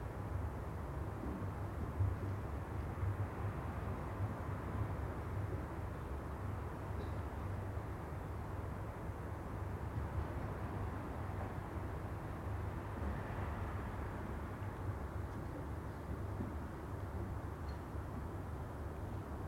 The Poplars Roseworth Avenue The Grove Moor Park North Alwinton Terrace
Across the alley
behind a black garage door
the churn and tump of a tumble drier
Stories written in the brickwork of back walls
lintels and sills from coal holes
lost doors
A woman opens her garage door
takes boxes and bags out of the boot of her BMW
she regards me
I greet her
Rooflines
sway-backed between loft extensions.